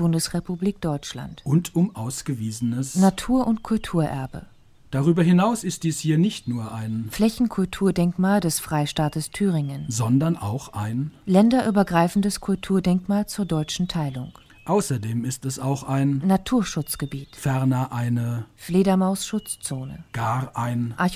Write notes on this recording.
Produktion: Deutschlandradio Kultur/Norddeutscher Rundfunk 2009